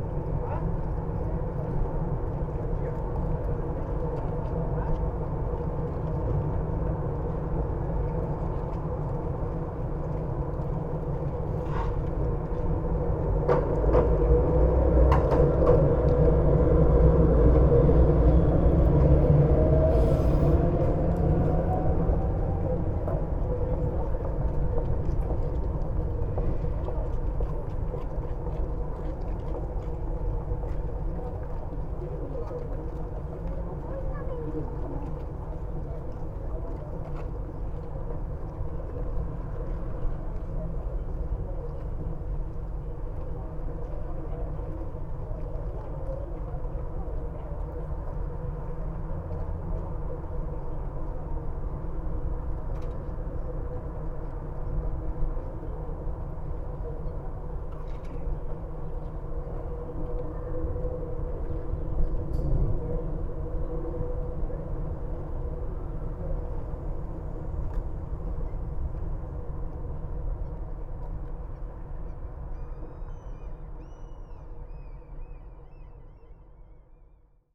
Tallinn, Baltijaam railside fence - Tallinn, Baltijaam railside fence (recorded w/ kessu karu)
hidden sounds, resonance inside two sections of a metal fence along tracks at Tallinns main train station
Tallinn, Estonia